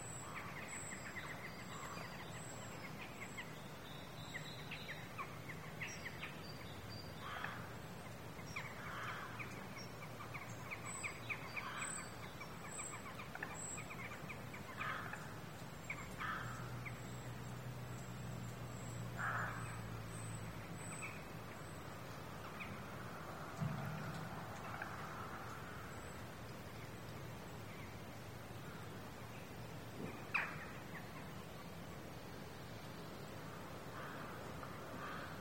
Tyneham, UK - Jackdaws, Rooks and Crows
Walking down to Warbarrow bay, with the sounds of the valley and the sea waves breaking in the background. Sony M10.